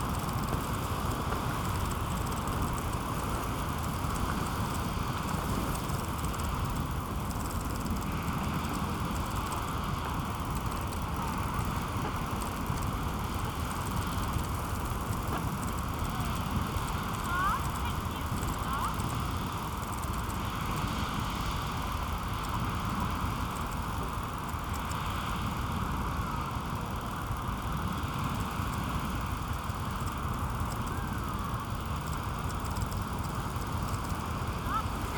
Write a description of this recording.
tent at the beach, fluttering strap, sound of the north sea, (Sony PCM D50, DPA4060)